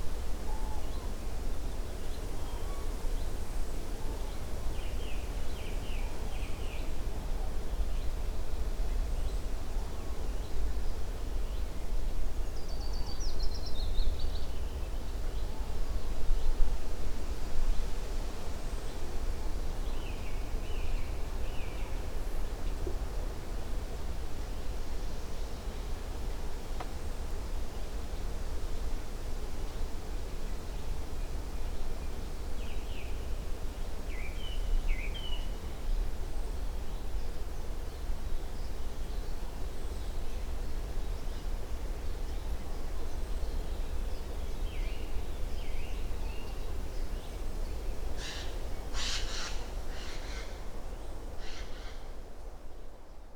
Sasino summer house - morning porch ambience
calm, sunny morning porch ambience in the summer house. (roland r-07)
pomorskie, RP, 11 June 2019